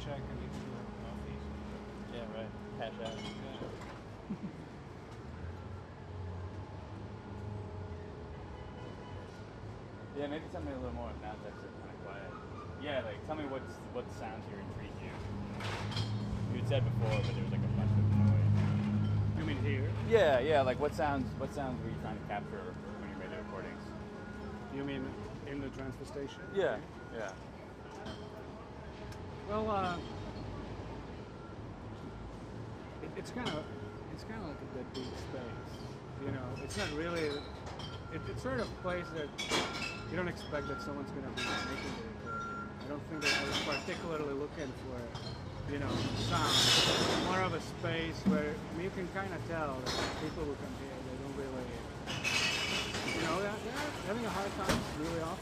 being interviewed by Sam Harnett for KQED program / California report / about field recording and Aporee while recycling beer bottles .. $6.57 received
CA, USA, 25 March 2015